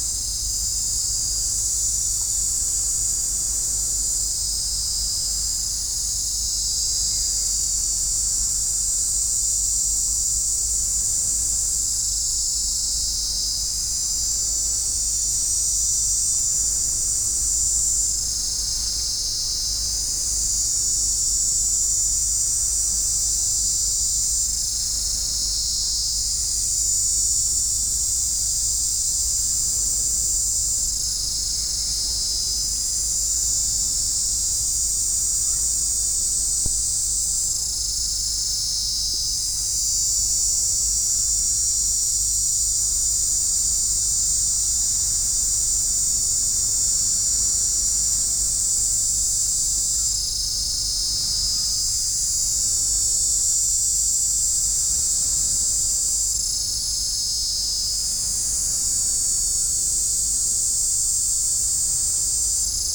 Koh Samui, Mae Nam beach, Grasshopers.
Plage de Mae Nam à Koh Samui, les criquets.